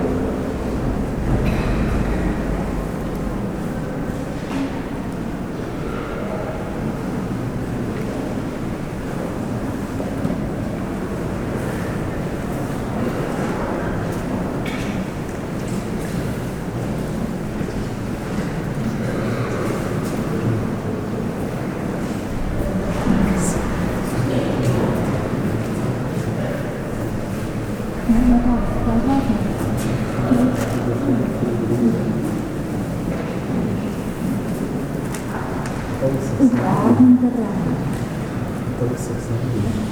tondatei.de: freiburg, münster - freiburg, münster